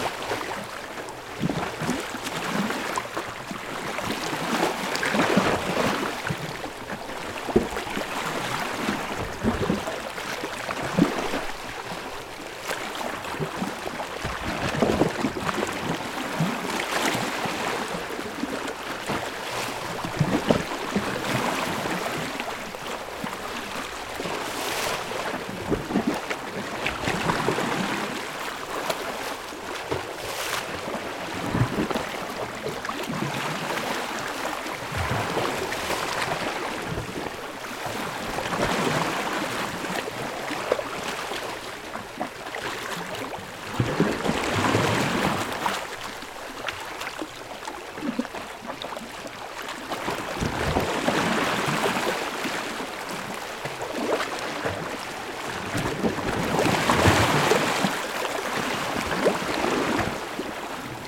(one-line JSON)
{"title": "Hengam Island, Hormozgan Province, Unnamed Road, Iran - The sea is Rising in Hengam island", "date": "2019-12-13 22:12:00", "description": "It was full moon night in a remote area in Hengam Island. The sea was rising because of the tide.", "latitude": "26.64", "longitude": "55.85", "altitude": "2", "timezone": "Asia/Tehran"}